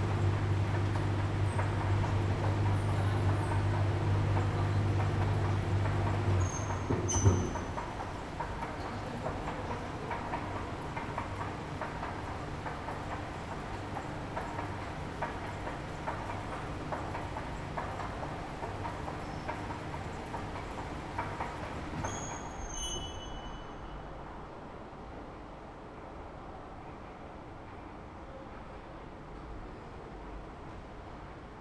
körnerstr., ubahn / subway - 1st floor below street level
subway, friday night